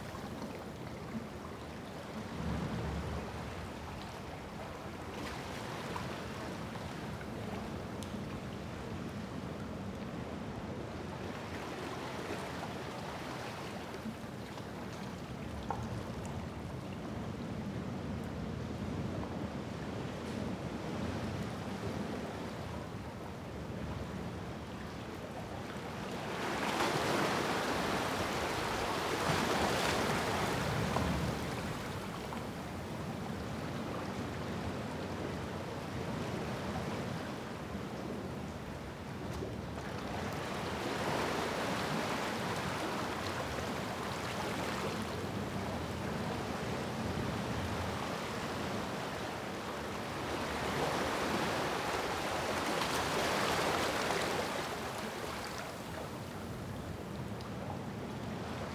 Matapa Chasm, Hikutavake, Niue - Matapa Chasm Atmos
14 June 2012, 20:00